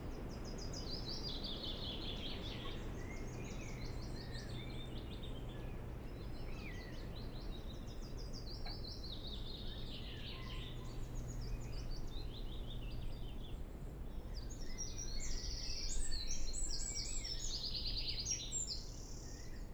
Glasson Moss, Cumbria, UK - Bird Trees
Birds, wind in trees, aeroplanes. recorded at the nature reserve Glasson Moss, a peat bog with strange fauna and flora. ST350 mic. Binaural decode